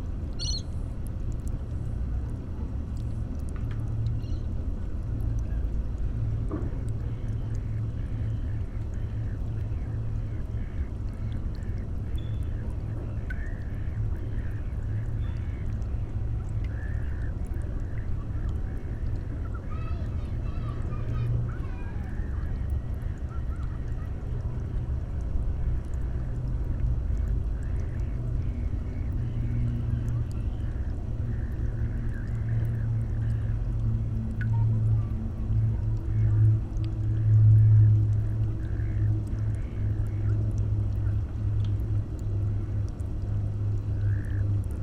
Sydney Olympic Park, NSW, Australia - By the pier
I came here with Environmental Scientist/Mosquito Specialist/Sound Artist Cameron Webb (aka Seaworthy) who normally works in this area who . And we did a little recording :)
Recorded with a pair of Usi's (Primo EM172's) into an Olmypus LS-5.